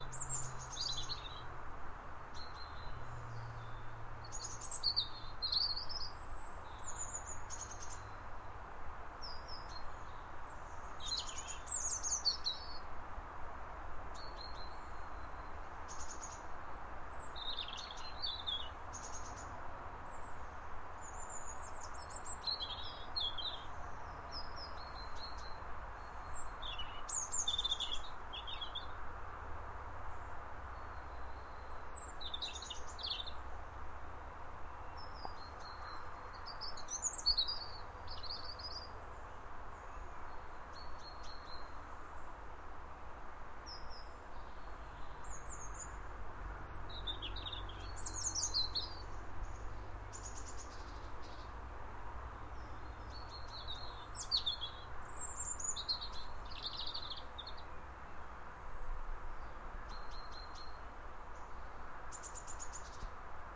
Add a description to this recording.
Sounds of birdsong recorded from the bridge over the river Rother in Chesterfield at Tapton Mill